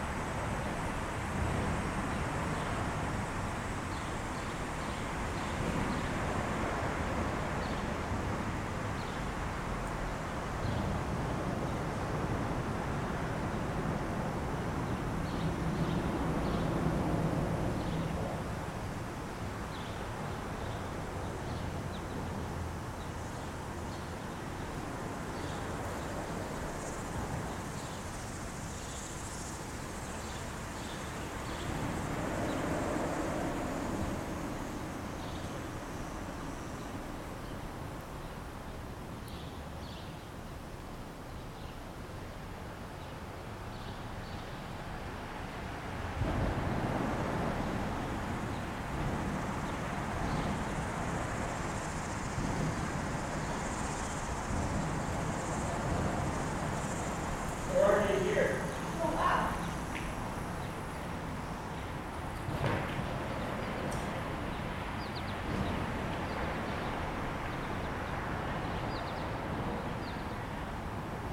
under Glendale Bridge, St. Catharines, ON, Canada - The Twelve | Under Glendale Bridge
The first recording was made with an H2n placed on the ground in the reverberant space under the Glendale Avenue Bridge crossing the Twelve Mile Creek. The site was the west side on a trail maybe 10 meters above creek level (variable because of nearby hydroelectric power generation) and perhaps about the same distance to the underside of the bridge. The second recording is 62 meters away on the pedestrian bridge where I dropped a hydrophone into the water; the current was quite swift. The bridge was built in 1975 replacing a single lane bridge built in 1912 and its story includes local government amalgamation and the rise of shopping centres in North America; the Pen Centre on Glendale Avenue was built in 1958.
Golden Horseshoe, Ontario, Canada, 28 July 2020